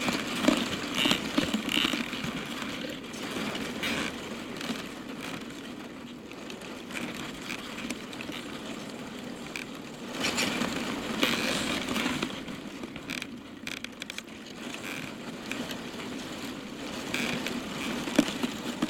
dry bush beside Pedernales river: texas
17 April 2009, 03:22